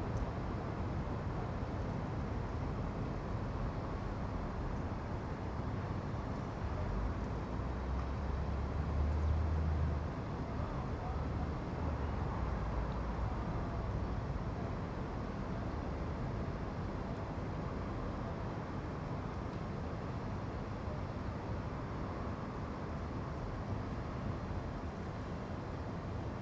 Contención Island Day 18 inner north - Walking to the sounds of Contención Island Day 18 Friday January 22nd
The Poplars High Street woodbine Avenue Back High Street
A man goes to the solicitors
A couple walk by
despite its small blue coat
their whippet looks cold
Traffic is not really distinguishable
A herring gull chuckles
a crow calls